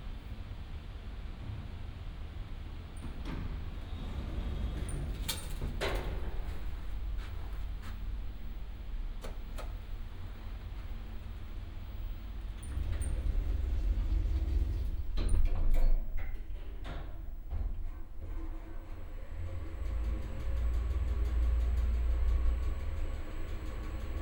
düsseldorf, data center - data center, walking
walk from the server area to exit, passing various security zones, including isolating devices and biometric scanners.
Düsseldorf, Germany, 18 February 2011